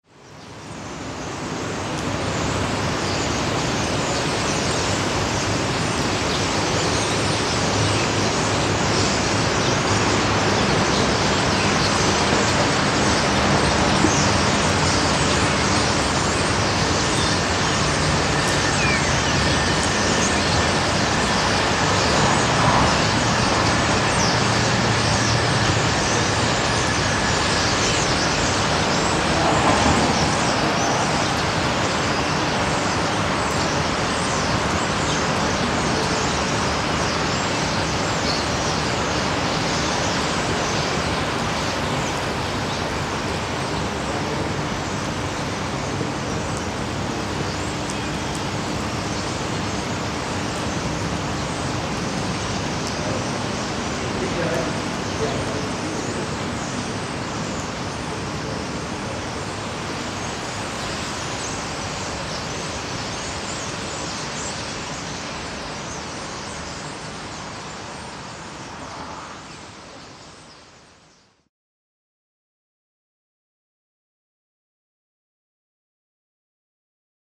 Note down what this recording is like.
A lot of Starlings in autumn, Zoom H6 canon microphone